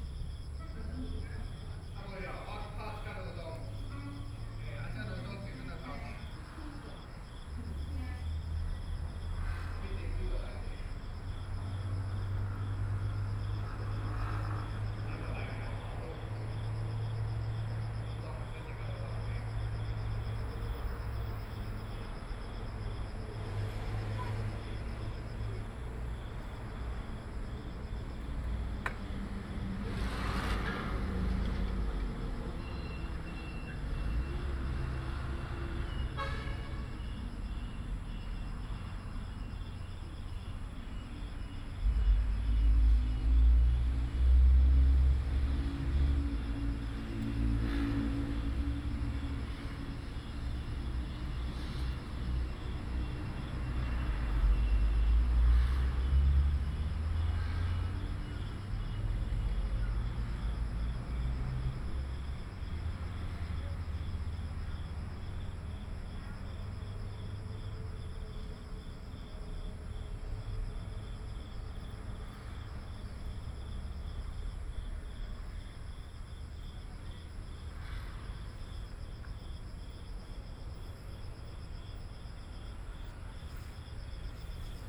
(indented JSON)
{
  "title": "268台灣宜蘭縣五結鄉二結村 - in front of the station",
  "date": "2014-07-25 18:18:00",
  "description": "In front of the Station, Small village, Birds, Traffic Sound, Trains traveling through\nSony PCM D50+ Soundman OKM II",
  "latitude": "24.71",
  "longitude": "121.77",
  "altitude": "9",
  "timezone": "Asia/Taipei"
}